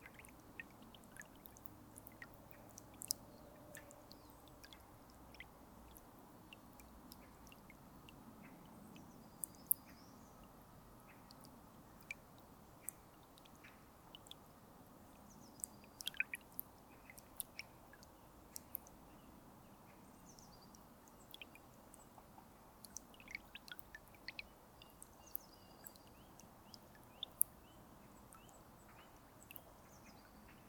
The river Thyle, a small quiet place into the forest.
Court-St.-Étienne, Belgium, 17 February 2016, 10:00